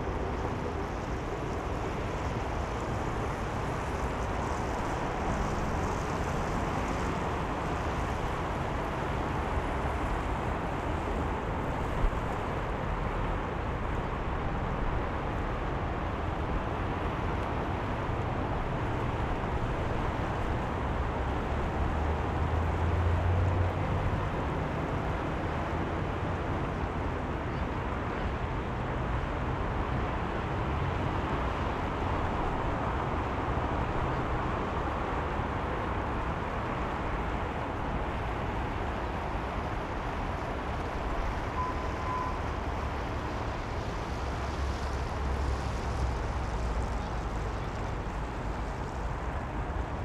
пл. Революции, Челябинск, Челябинская обл., Россия - The main square of Chelyabinsk. Lenin monument. Big traffic cars.
The main square of Chelyabinsk. Lenin monument. Big traffic cars.
Zoom F1 + XYH6
22 February 2020, 21:05